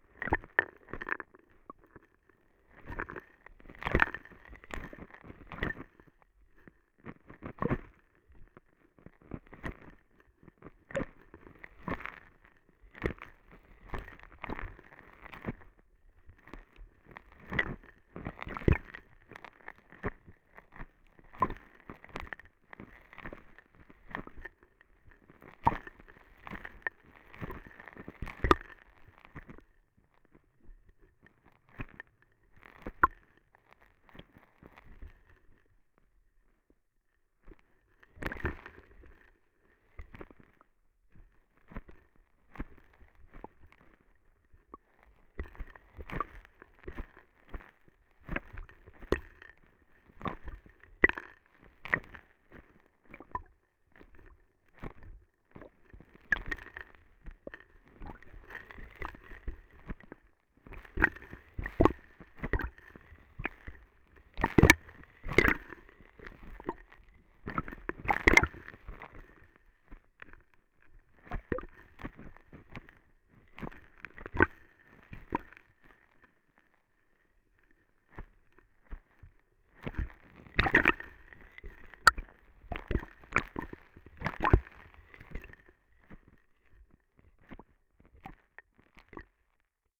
Vltava (Moldau) river, gentle waves and sand, and abusing contact microphones as hydrophones. recorded during the Sounds of Europe radio spaces workshop.
Prague, Czech Republic, 4 October 2012